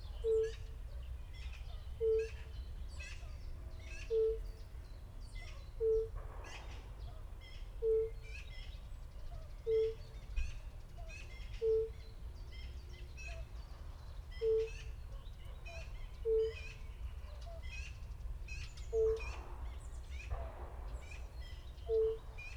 Kormoranów, Siemianowice Śląskie - toads, shots, runners, drone
sitting at the edge of a shallow pond, listening to the fire-bellied toads calling, distant announcements of a short marathon, a bit later the runners passing by, a drone appears, shots all the time
(Sony PCM D50, DPA4060)